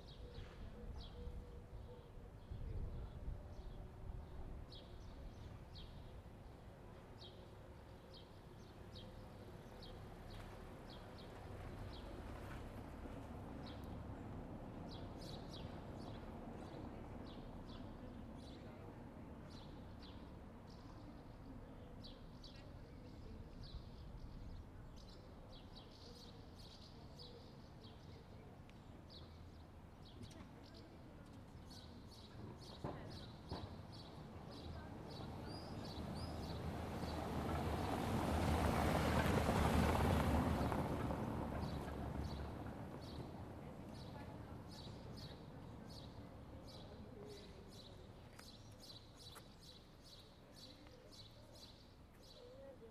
Αντίκα, Ξάνθη, Ελλάδα - Metropolitan Square/ Πλατεία Μητρόπολης- 13:45
Light traffic, birds singing, person talking distant.
Περιφερειακή Ενότητα Ξάνθης, Περιφέρεια Ανατολικής Μακεδονίας και Θράκης, Αποκεντρωμένη Διοίκηση Μακεδονίας - Θράκης, 12 May 2020, 13:45